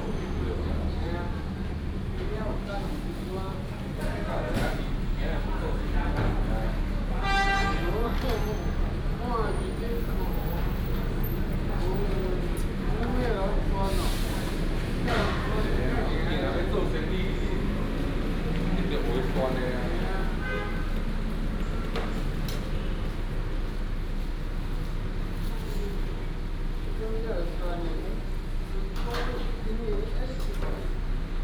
豐原客運東勢站, Dongshi Dist., Taichung City - At the bus station
At the bus station, In the station hall, traffic sound, Binaural recordings, Sony PCM D100+ Soundman OKM II
Dongshi District, Taichung City, Taiwan, 19 September, 07:21